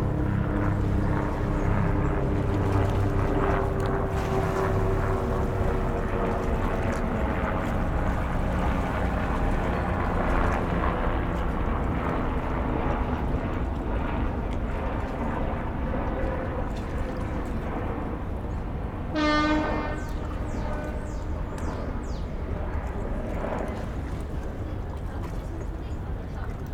Battery Park, New York, NY, USA - Battery Park, Lower Manhattan
Battery Park, Lower Manhattan: sound of water, helicopters passing by and announcements from the tour boats.
Zoom H6
14 April, 09:00